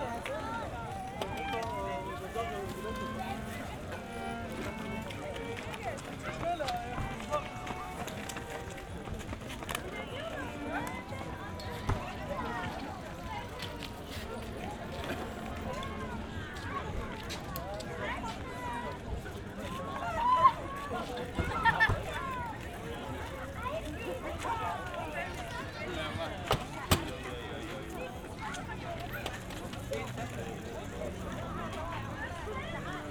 oderstraße/herfurthstraße: zufahrtstor zum flughafen tempelhof - entrance, closing time, people leaving the park
near the entrance Oderstraße, people leaving, the field closes around sunset, musicians
(SD702, Audio Technica BP4025)
Berlin, Germany, 6 July 2013